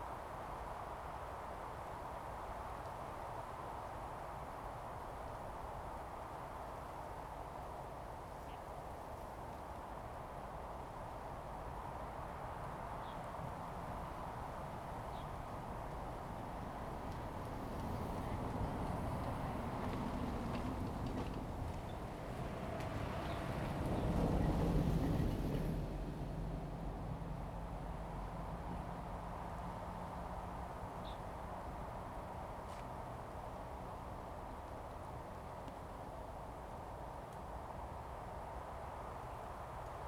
Iron-wood, Birds singing, next to the lake's, Wind
Zoom H2n MS +XY